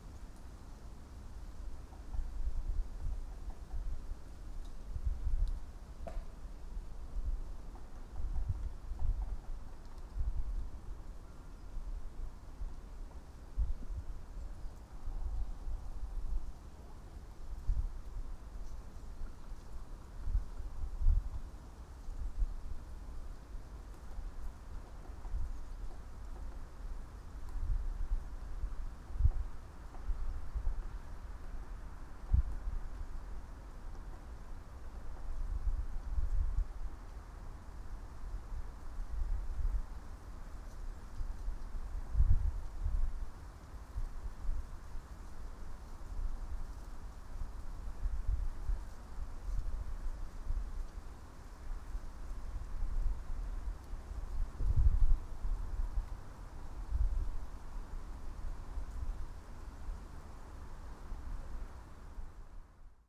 two trees, piramida - creaking trees
birds singing and pecking, gentle wind, traffic noise beyond the hill ... and few tree creaks
Maribor, Slovenia, 25 December 2012